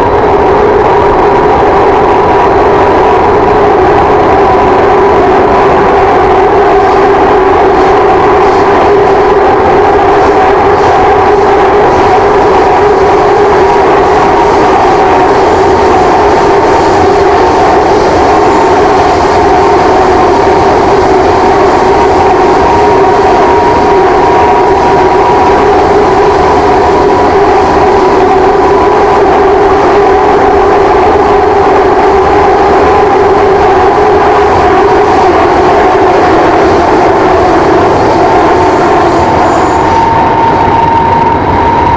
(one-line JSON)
{"title": "Napoli. Metro, Linea 1, stazione Museo.", "date": "2010-08-12 18:50:00", "description": "Subway in Napoli, line 1. From Museo to Materdei stations.", "latitude": "40.85", "longitude": "14.25", "altitude": "49", "timezone": "Europe/Rome"}